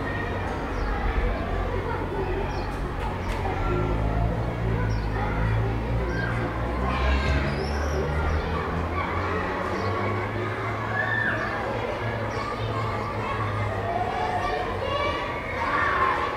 {"title": "Rue Vaugelas, Aix-les-Bains, France - Tutti bruyant", "date": "2022-07-04 10:40:00", "description": "La fin de la récréation à l'école du Centre, les cloches de Notre Dame sonnent avant celle de l'école, les martinets crient dans le ciel, voitures de passage, retour au calme.", "latitude": "45.69", "longitude": "5.91", "altitude": "261", "timezone": "Europe/Paris"}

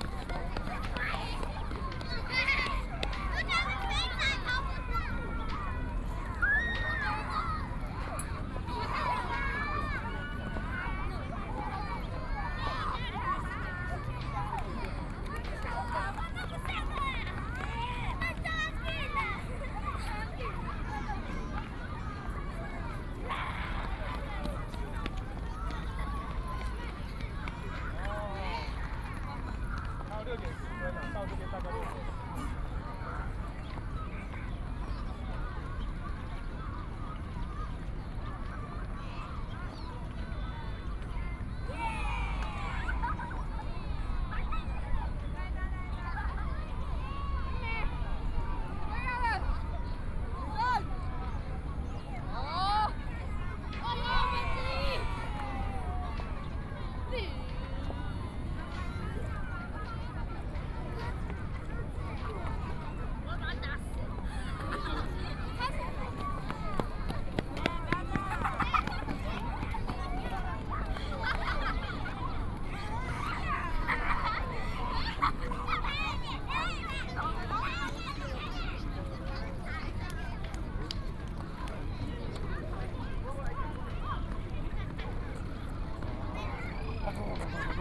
{"title": "Taipei, Taiwan - In the Square", "date": "2013-05-17 18:03:00", "description": "Child, Square, Sony PCM D50 + Soundman OKM II", "latitude": "25.04", "longitude": "121.52", "altitude": "7", "timezone": "Asia/Taipei"}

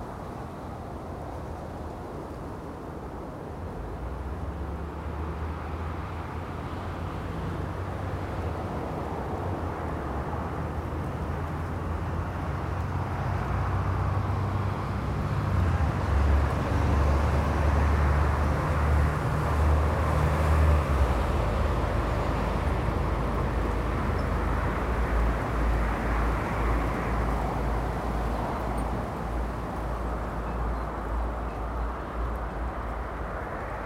{"title": "Contención Island Day 40 inner southeast - Walking to the sounds of Contención Island Day 40 Saturday February 13th", "date": "2021-02-13 08:10:00", "description": "The Poplars High Street Little Moor Jesmond Dene Road Great North Road\nBy a hawthorn and bramble hedge\nshelter from a cold southerly wind\nTraffic slows and speeds\ncomes and goes\nA lone runner\ncrosses the road\nabove\nmagpies follow their own map\nalong treetops\nFootprints in the snow\ntracks into the bushes\nand to a hole in the fence", "latitude": "54.99", "longitude": "-1.62", "altitude": "63", "timezone": "Europe/London"}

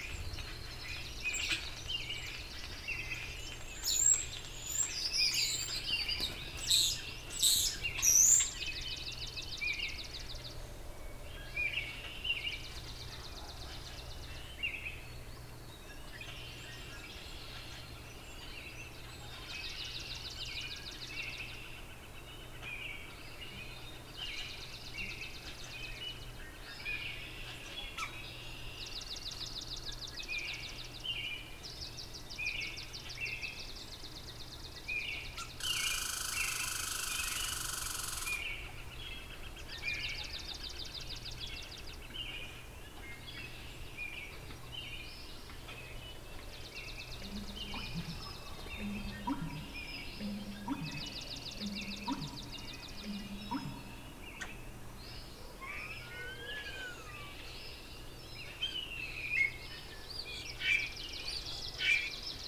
{"title": "Eagle Lake Rd, South River, ON, Canada - DawnBirds 20200502 Reveil", "date": "2020-05-02 05:30:00", "description": "Dawn chorus activity at 5:30 am. Recorded at Warbler's Roost in unorganized township of Lount in Parry Sound District of Ontario.", "latitude": "45.82", "longitude": "-79.58", "altitude": "337", "timezone": "America/Toronto"}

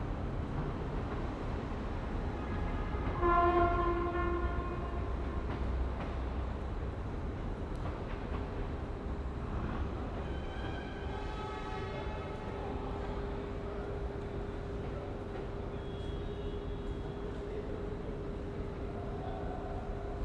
{"title": "Paraguay, Montevideo, Departamento de Montevideo, Uruguay - Montevideo - Uruguay - Estación Central General Artigas", "date": "2000-10-18 14:00:00", "description": "Montevideo - Uruguay\nEstación Central General Artigas\nAmbiance départ d'un train", "latitude": "-34.90", "longitude": "-56.19", "altitude": "14", "timezone": "America/Montevideo"}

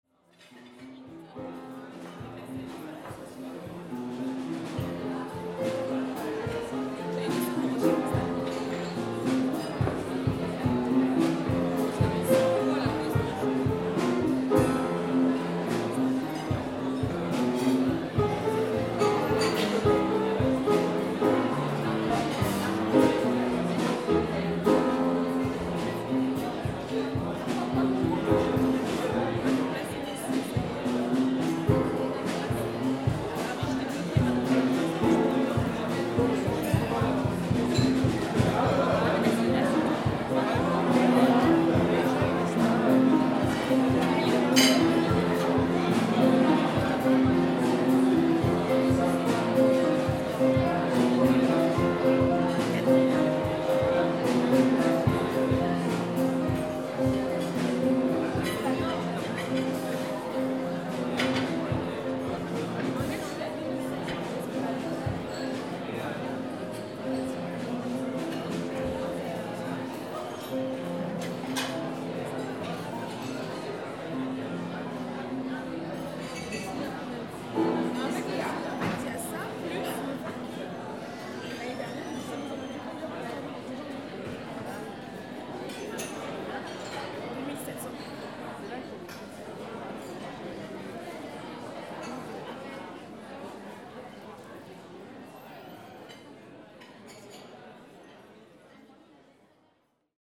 {"title": "La Bellevilloise, Paris, France - Halle aux Oliviers, restaurant. [Bellevilloise]", "date": "2011-05-20 19:17:00", "description": "La bellevilloise, à la halle aux oliviers\nAmbiance restaurant, un groupe de jazz.conversations.\nRestaurant ambiance.jazz Band playing.", "latitude": "48.87", "longitude": "2.39", "altitude": "88", "timezone": "Europe/Paris"}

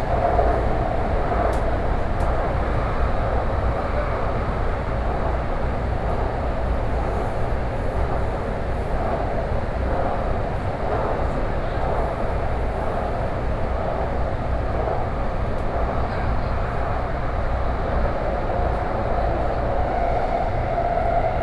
{"title": "Songshan-Xindian Line, Wenshan District - In the subway", "date": "2012-11-07 07:30:00", "latitude": "25.01", "longitude": "121.54", "altitude": "20", "timezone": "Asia/Taipei"}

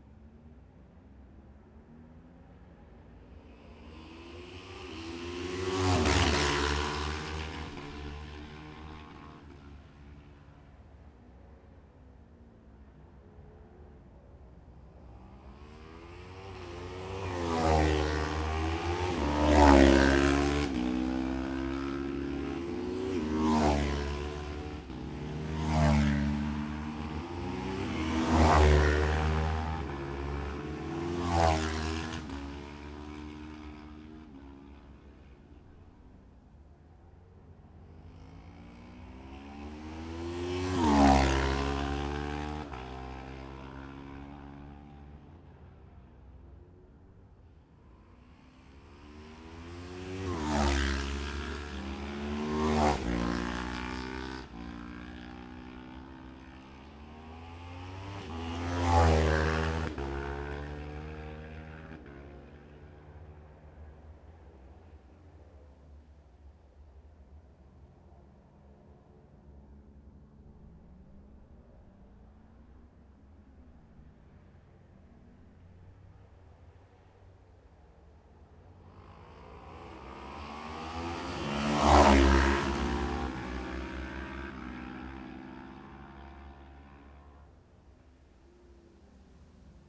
{"title": "Jacksons Ln, Scarborough, UK - Gold Cup 2020 ...", "date": "2020-09-11 11:25:00", "description": "Gold Cup 2020 ... twins practice ... Memorial Out ... dpa 4060s to Zoom H5 clipped to bag ...", "latitude": "54.27", "longitude": "-0.41", "altitude": "144", "timezone": "Europe/London"}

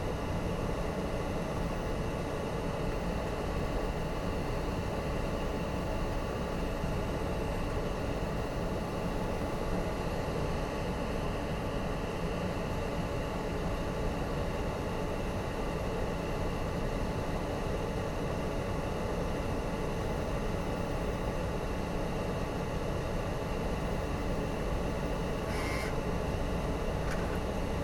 {"title": "big printer2", "date": "2010-06-16 23:00:00", "description": "愛知 豊田 printer human", "latitude": "35.14", "longitude": "137.15", "altitude": "107", "timezone": "Asia/Tokyo"}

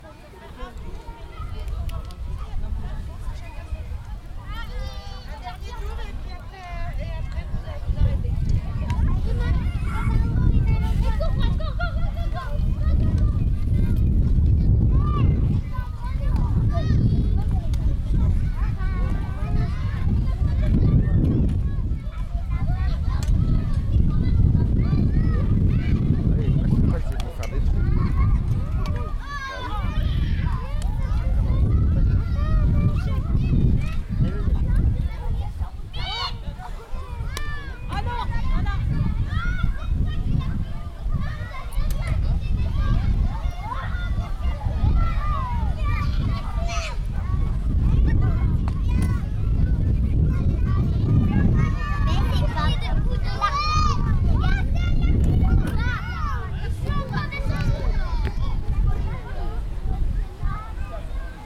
{"title": "Saint-Nazaire, France - Promenons nous dans les (jeux en) bois", "date": "2015-09-23 16:30:00", "description": "Emma et Céleste ont affronté les enfants et le vent. Ambiance aux jeux en bois, un mercredi après midi. Radio La Tribu.", "latitude": "47.27", "longitude": "-2.21", "altitude": "1", "timezone": "Europe/Paris"}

